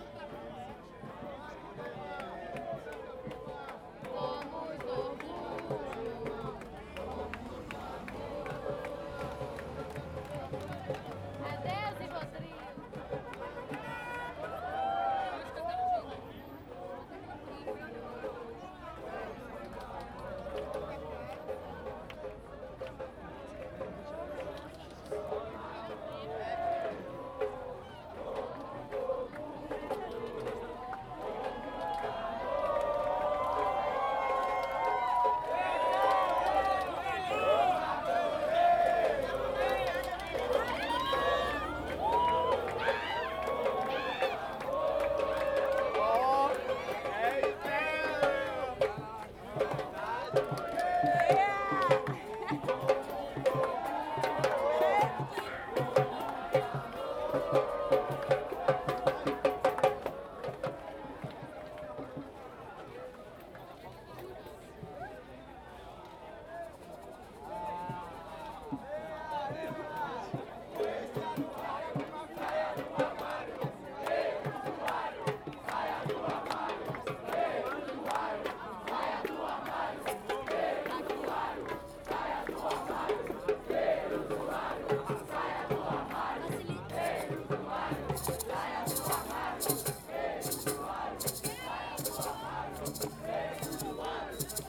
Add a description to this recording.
A peaceful legalise marijuana march in Salvador, Brazil